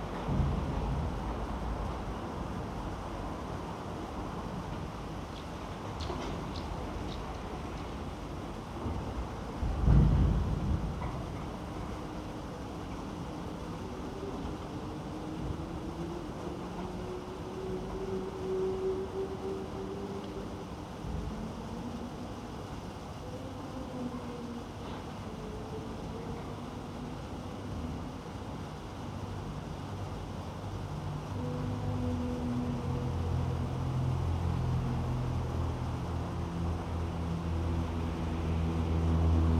Rummelsburg, Berlin, Germany - Brown coal barges unloading, Heizkraftwerk Klingenberg
Brown coal arriving from opencast mines by barge is unloaded by huge grabbers on cranes that swing their load in a graceful arc to dump it on the vast coal stores. The thundering of the grabber into the barge is accompanied by the sounds of water pouring into the canal and heavy traffic in this bleak, but impressive, industrial area.
16 March 2012